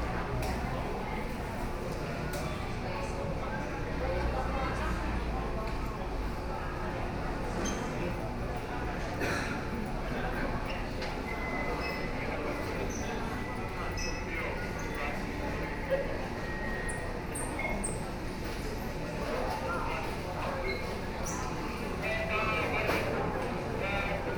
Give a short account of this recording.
In the station hall, Binaural recordings, Sony PCM D50 + Soundman OKM II